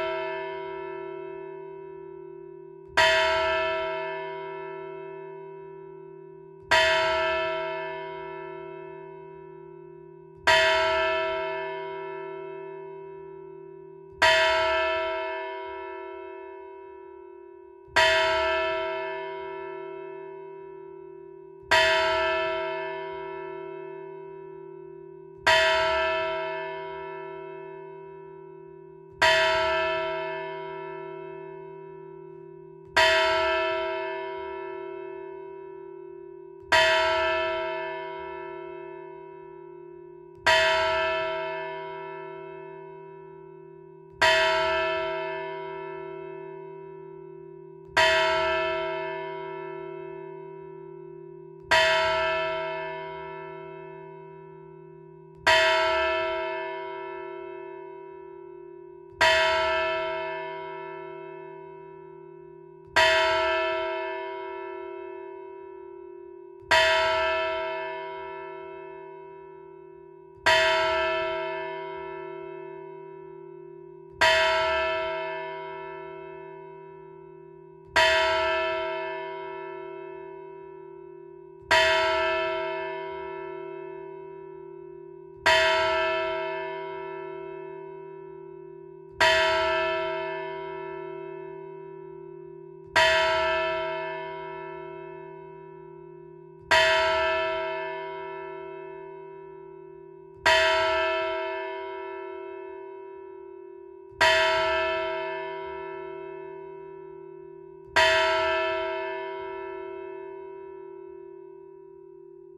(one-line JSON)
{"title": "Rue de l'Église, Rumegies, France - Rumegies (Nord) - église", "date": "2021-04-29 10:30:00", "description": "Rumegies (Nord)\néglise - tintement automatisé", "latitude": "50.49", "longitude": "3.35", "altitude": "29", "timezone": "Europe/Paris"}